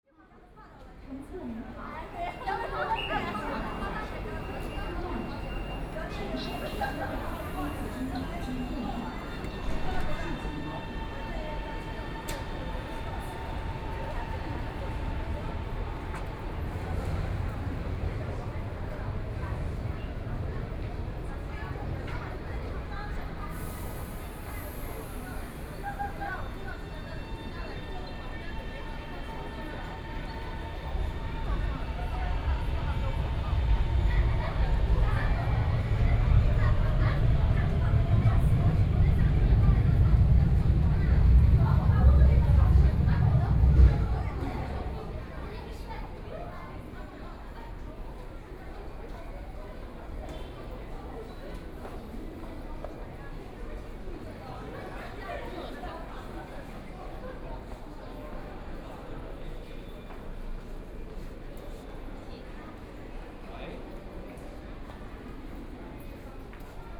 Zhongshan Park Station, Changning District - walking in the Station
walking in the Station, Broadcasting messages in the Station, Binaural recording, Zoom H6+ Soundman OKM II